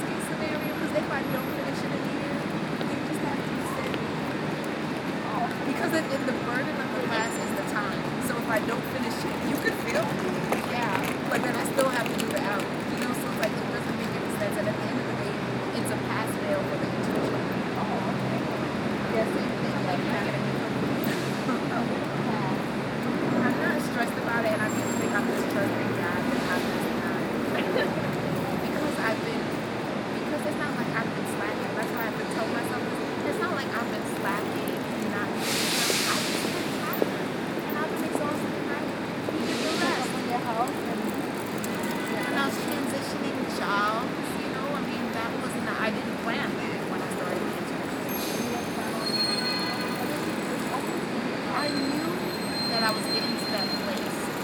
New York, City Hall Park, endless conversation.